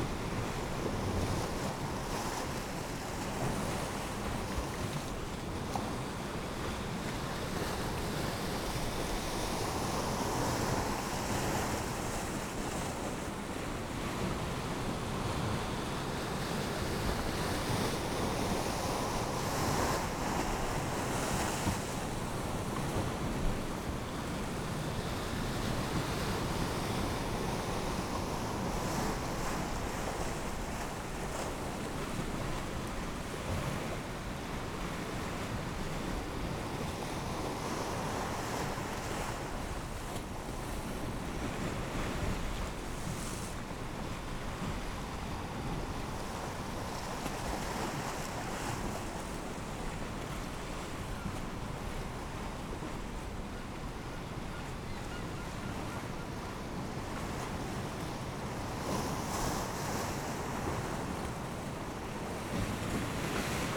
East Lighthouse, Battery Parade, Whitby, UK - east pier falling tide
east pier falling tide ... dpa 4060s clipped to bag to zoom h5 ...